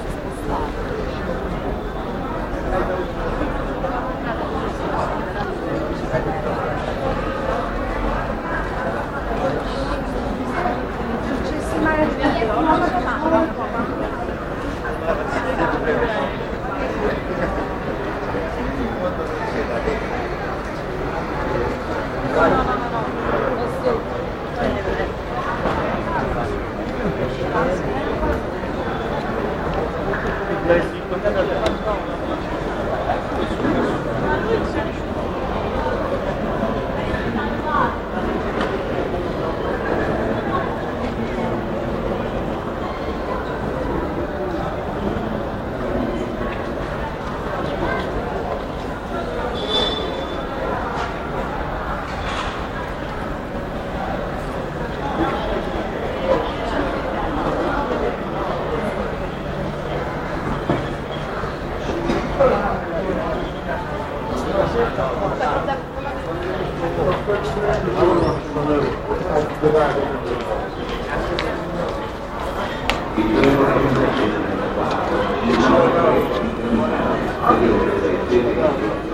Waiting for the train in Napoli, central railways station
Naples, Italy, August 2010